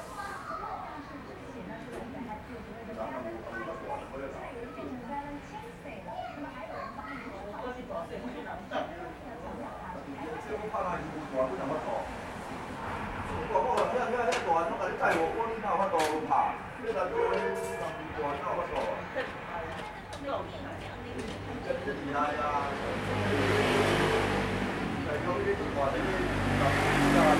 福和大戲院, Yonghe Dist., New Taipei City - Old community building
Old community building, Stop theater operations, Sony ECM-MS907, Sony Hi-MD MZ-RH1
Yonghe District, New Taipei City, Taiwan, 15 February 2012